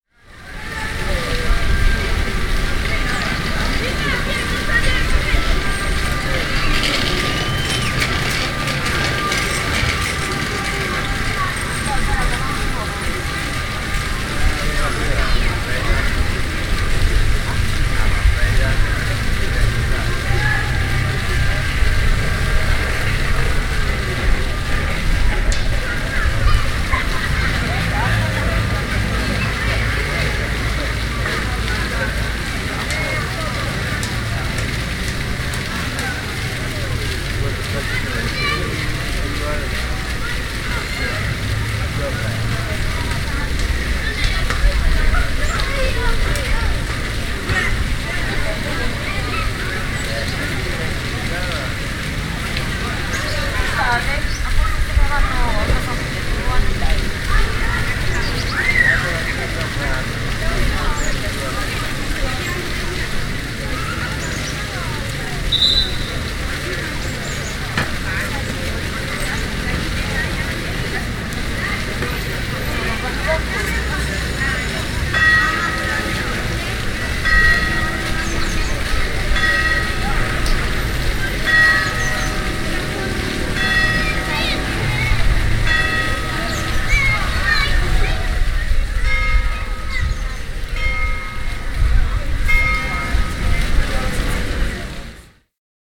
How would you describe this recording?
A weekend's afternoon in the centre of the city..